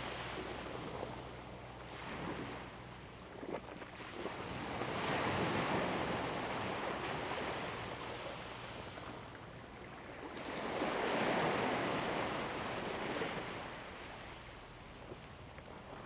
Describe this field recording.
In the beach, Sound of the waves, Zoom H2n MS +XY